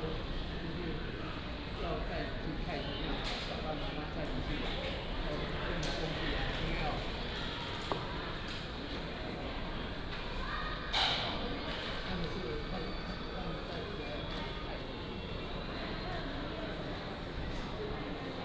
Chiayi Station, West Dist., Chiayi City - In the station hall
In the station hall, Station information broadcast